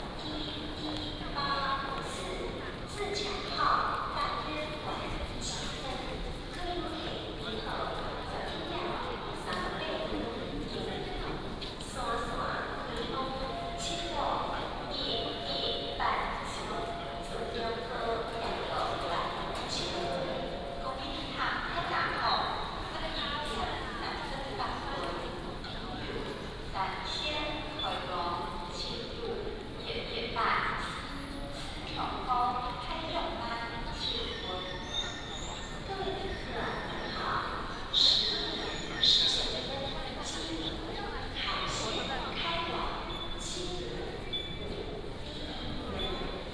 Douliu Station, Yunlin County - Walk into the station
Walk into the station, Traffic sound, In the station hall, Station Message Broadcast